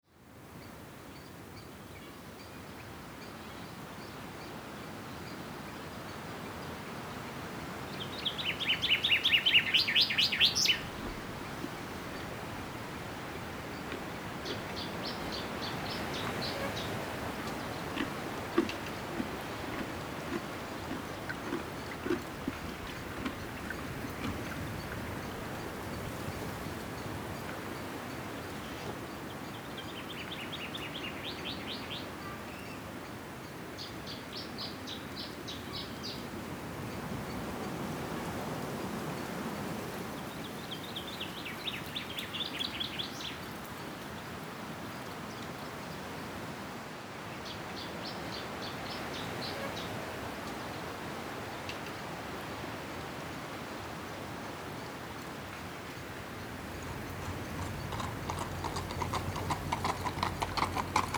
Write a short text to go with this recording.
Auf einem Pferdehof an einem milden Frühlingsnachmittag. Der Klang eines alten Gattertors, das sich im Wind bewegt, ein Pferd kaut Hafer, ein anderes Tier galoppiert vorbei, das Schnauben der Nüstern. At a horse yard. Projekt - Stadtklang//: Hörorte - topographic field recordings and social ambiences